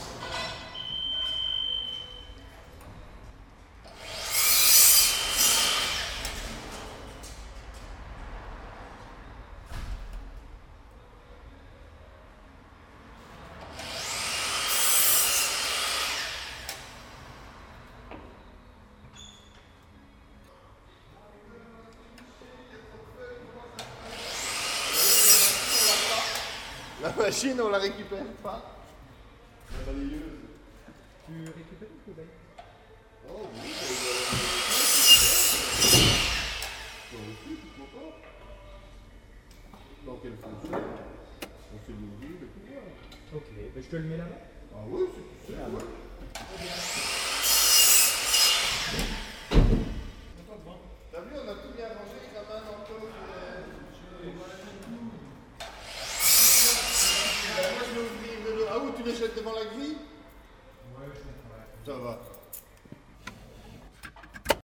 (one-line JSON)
{"title": "Court-St.-Étienne, Belgique - The warehouse", "date": "2015-11-17 09:00:00", "description": "People working, prepairing future roadworks, they store traffic signs.", "latitude": "50.64", "longitude": "4.54", "altitude": "67", "timezone": "Europe/Brussels"}